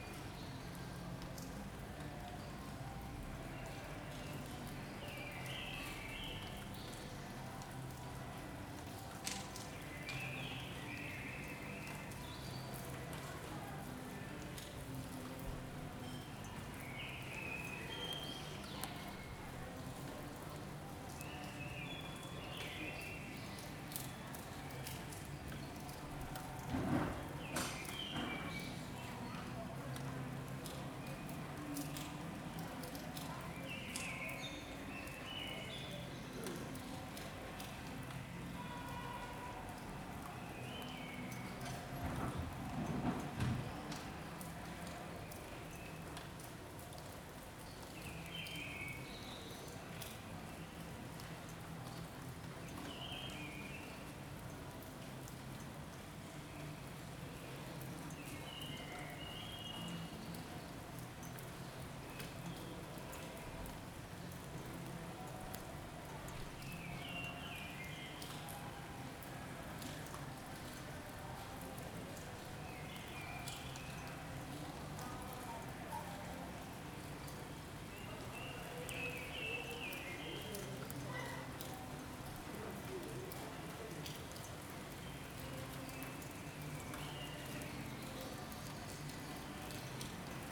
Carrer de Joan Blanques, Barcelona, España - Rain18042020BCNLockdown
Raw field recording made from the window during the COVID-19 Lockdown. Rain and sounds from the city streets and the neighbours. Recorded using a Zoom H2n.
Catalunya, España